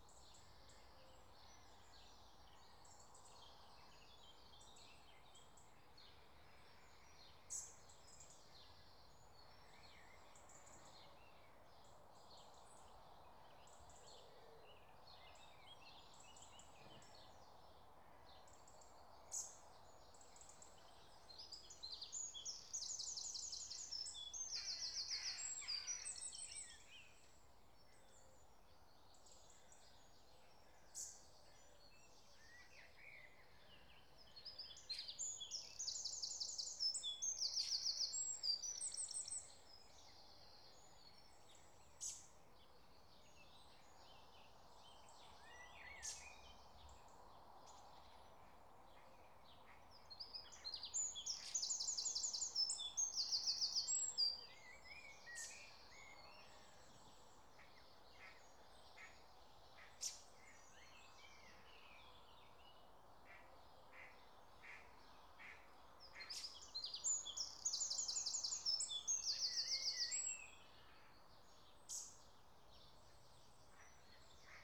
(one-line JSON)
{"title": "River Frome, Dorchester, UK - Deep in the undergrowth", "date": "2017-06-22 06:07:00", "description": "Completely surrounded by trees and bushes, away from the river path, early on a Sunday morning.", "latitude": "50.72", "longitude": "-2.43", "altitude": "58", "timezone": "Europe/London"}